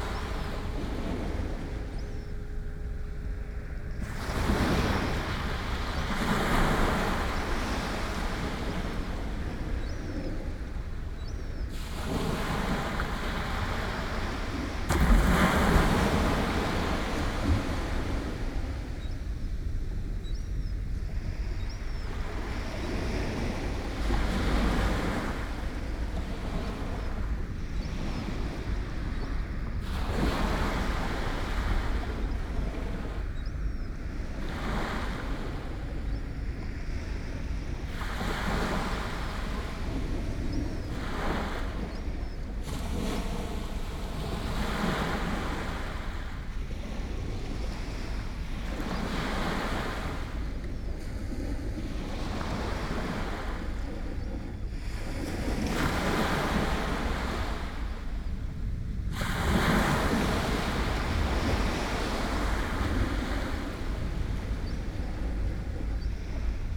S W Coast Path, Swanage, UK - Swanage Beach
Morning meditation on Swanage beach. Recorded on a matched pair of Sennheiser 8020s, Jecklin Disk and SD788T.